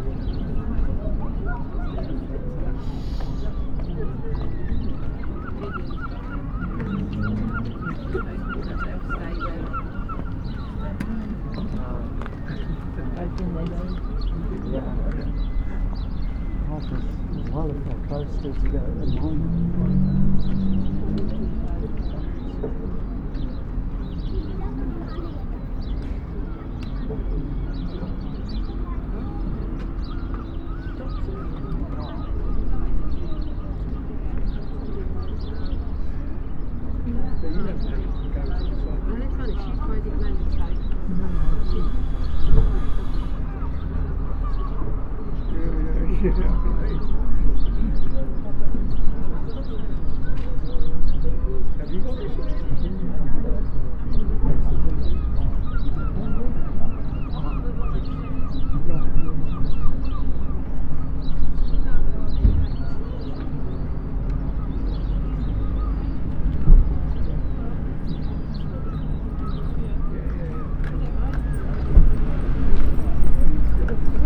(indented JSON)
{
  "title": "Queue For Ice Cream, Aldeburgh, Suffolk, UK - Queue",
  "date": "2021-07-13 14:54:00",
  "description": "On the sea front a queue is waiting for ice cream and coffee from a small shop. The service is slow and the people wait with patience. Passers-by talk and children play. Is this a very \"English\" scene ? I think so.\nI am experimenting again with laying the mics on the ground to make use of a \"boundary effect\" I have noticed before.\nRecorded with a MixPre 6 II and 2 x Sennheiser MKH 8020s.",
  "latitude": "52.15",
  "longitude": "1.60",
  "altitude": "4",
  "timezone": "Europe/London"
}